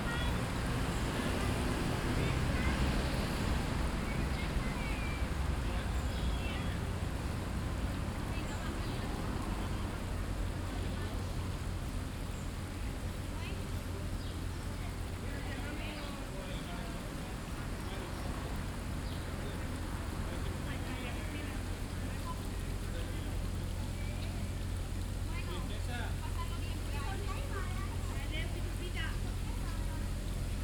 Parque de la Ciudadela, Passeig de Picasso, Barcelona, Barcelona, España - Sculpture Fountain "Homenatge a Picasso" by Antoni Tàpies
Water recording made during World Listening Day.
Barcelona, Spain, 18 July, ~13:00